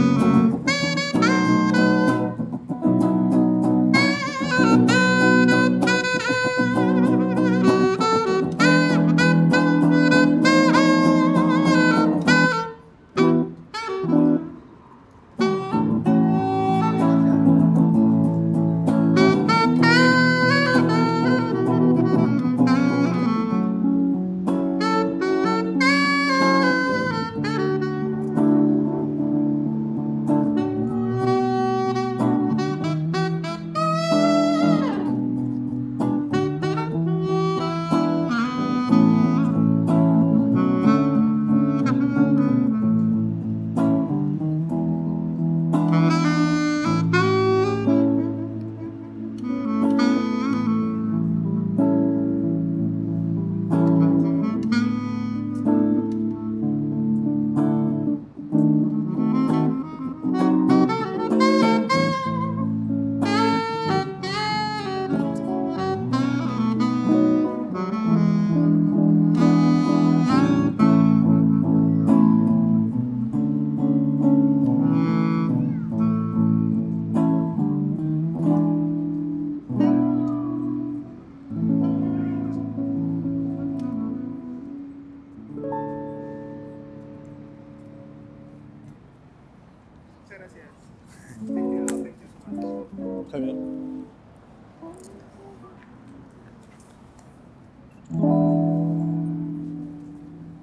Arc de Triomf, Barcelona, Espagne - Musiciens de rue
sur le site de l'arc de triomphe il fait beau, les touristes flânent, deux musiciens nous régalent de leur musique harmonieuse
on the site of the Arc de Triomphe, the weather is nice, tourists stroll, two musicians we feast of their harmonious music